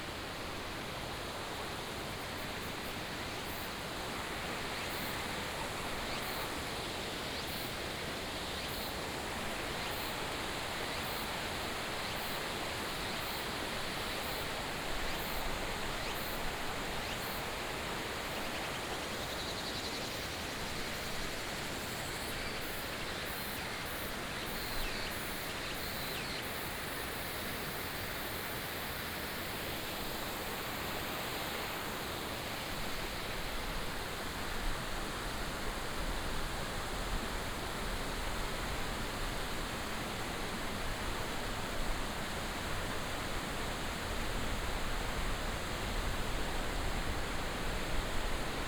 八卦力吊橋, Nanzhuang Township - Walking on the suspension bridge
Walking on the suspension bridge, Cicadas, Insects, The sound of birds, stream sound, Binaural recordings, Sony PCM D100+ Soundman OKM II
Nanzhuang Township, 124縣道, 15 September, ~10:00